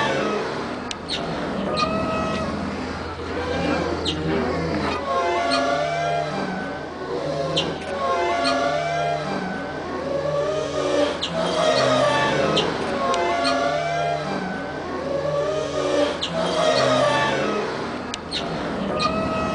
Sandtorhafen/Traditionsschiffhafen Am Sandtorkai, Hamburg, Deutschland - Schwimmstege in Wind und Welle
March 2012